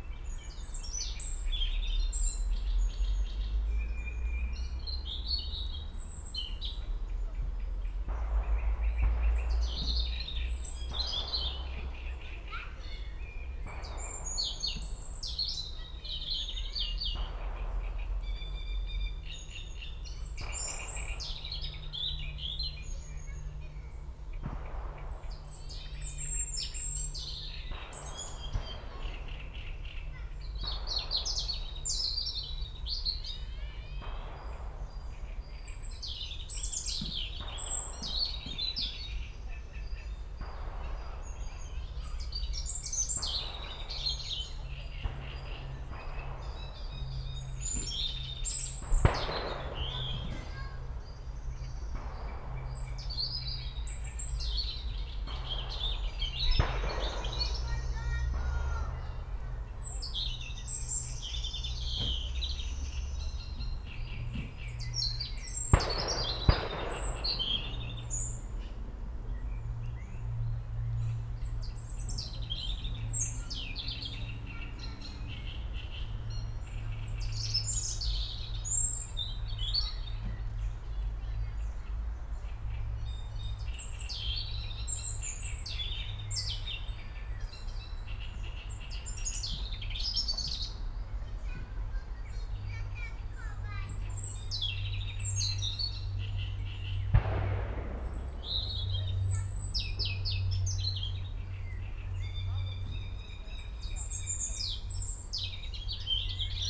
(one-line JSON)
{"title": "aleja Spacerowa, Siemianowice Śląskie - birds, kids, shots", "date": "2019-05-21 11:35:00", "description": "aleja Spacerowa, Siemianowice, playground at the leisure and nature park, kids playing, birds (robin, great read warbler) singing, heavy shooting from the nearby range.\n(Sony PCM D50, DPA4060)", "latitude": "50.32", "longitude": "19.03", "altitude": "276", "timezone": "GMT+1"}